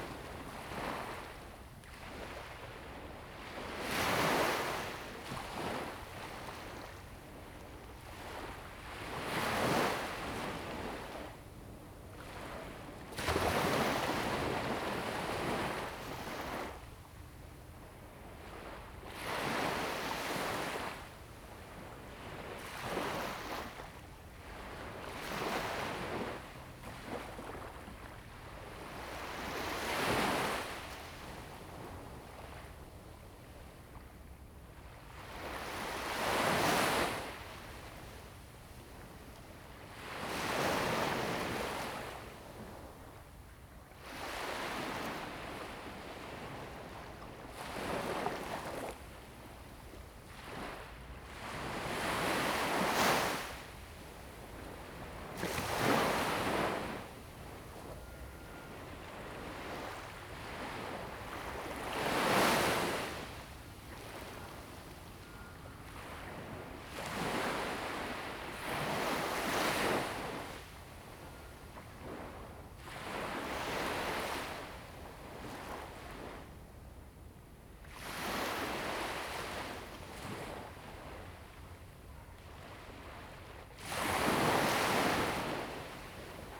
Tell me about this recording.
Sound of the waves, Zoom H2n MS+XY